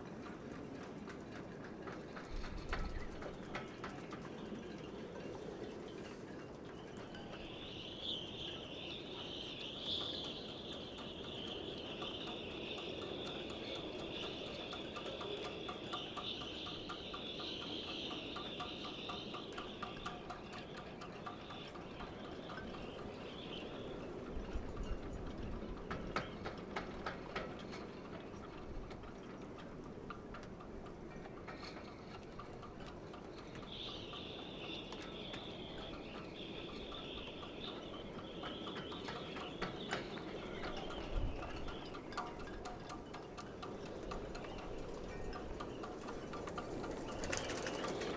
stormy day (force 7-8), wind blows through the riggings of the ships
the city, the country & me: june 13, 2013
Woudsend, The Netherlands